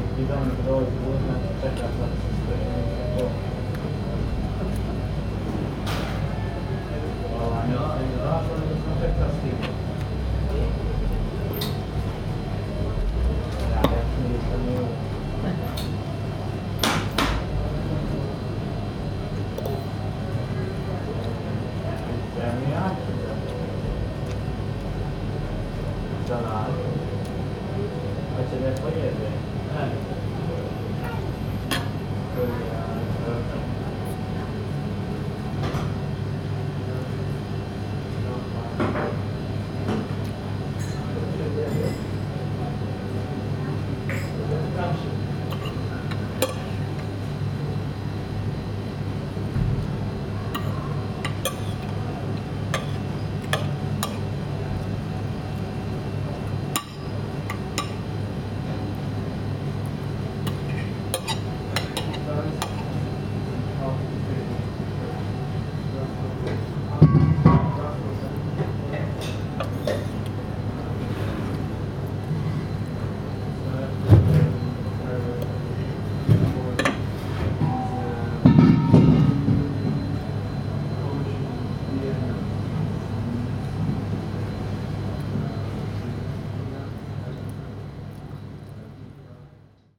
Falckensteinstraße, Berlin, Germany - fridges and customers
inside the shawama place on the corner. outside people hanging out in front of the Kaisers supermarket.